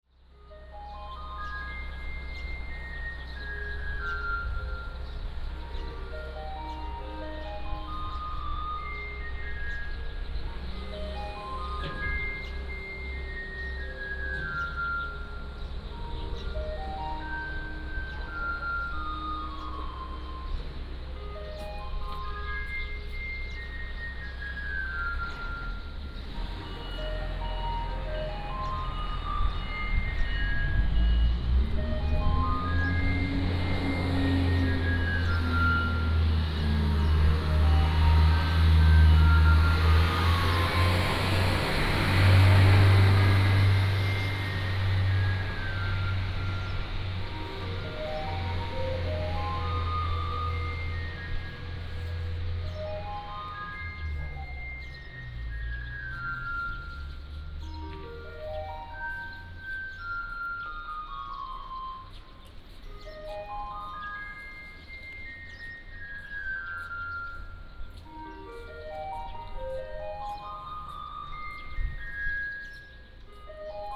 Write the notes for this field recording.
In the Square, Small village, Next to the temple, Traffic Sound